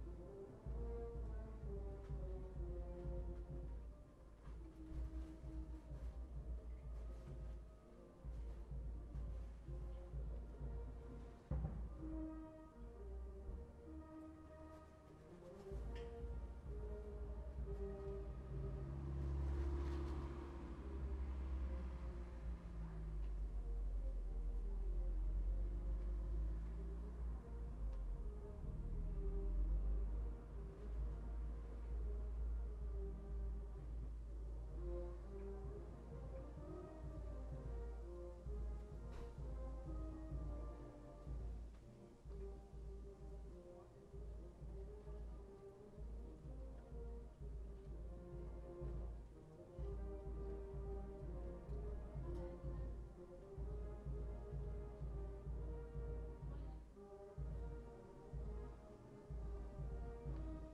A brass band plays at a local fest or a wedding party. Its sound carries through the air through the neighbourhood.